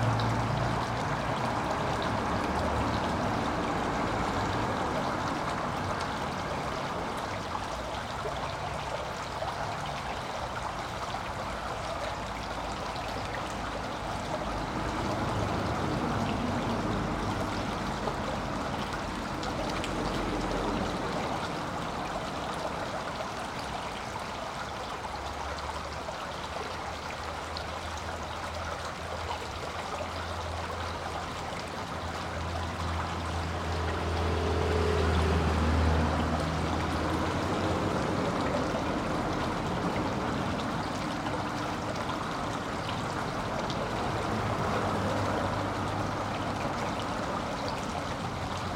The river, cars passing by, a train.
Tech Note : Sony PCM-M10 internal microphones.
France métropolitaine, France, 22 July, ~17:00